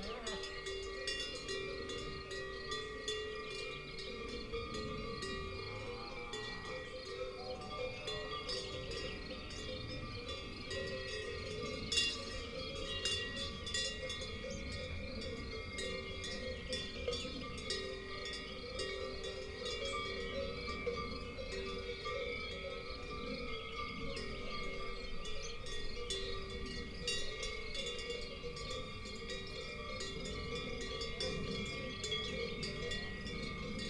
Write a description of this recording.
Cows on pasture. Lom Uši pro, mixPreII